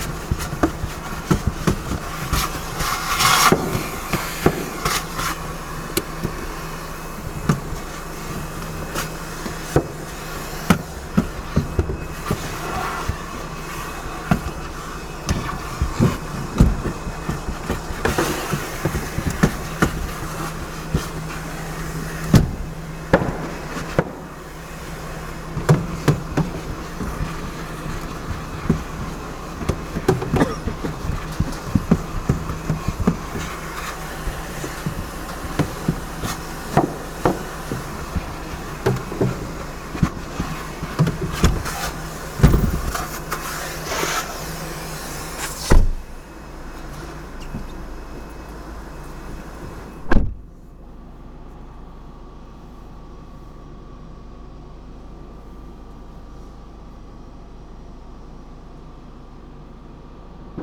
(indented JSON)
{
  "title": "Washing Car Service",
  "date": "2011-03-08 13:50:00",
  "description": "Inside a car being washed! Applied limiter.",
  "latitude": "41.40",
  "longitude": "2.13",
  "altitude": "129",
  "timezone": "Europe/Madrid"
}